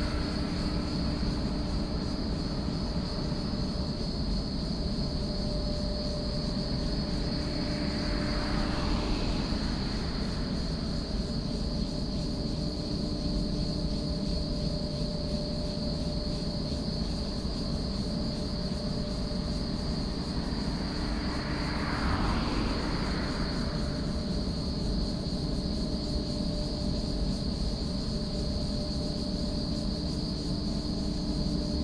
桃20鄉道, Longtan Dist., Taoyuan City - factory noise and Cicadas
Technology factory noise, Cicadas, Traffic sound
Longtan District, 桃20鄉道5-2, 17 August 2017